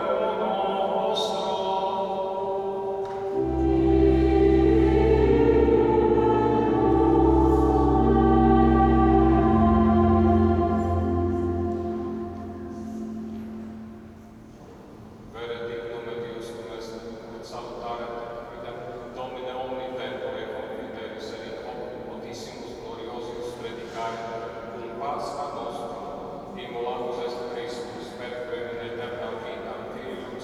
Moscow Immaculate Conception Catholic Cathedral - Novus Ordo